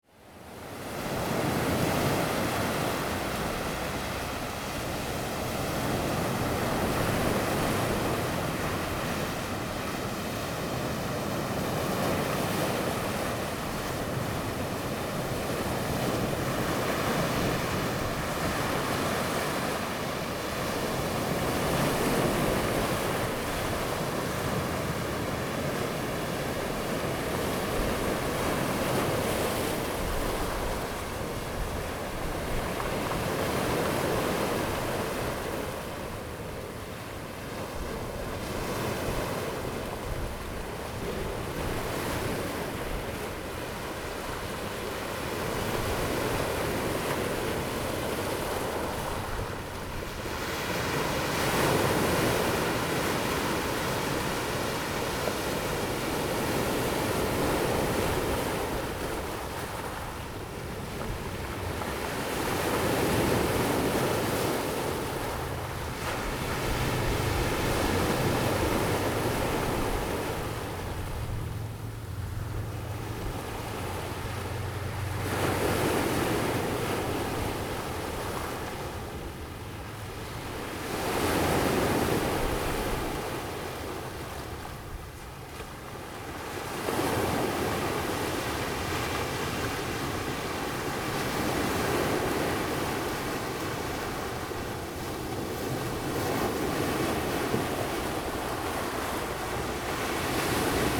Bali Dist., New Taipei City - the waves
Sound of the waves
Zoom H2n MS+XY
New Taipei City, Linkou District, 西部濱海公路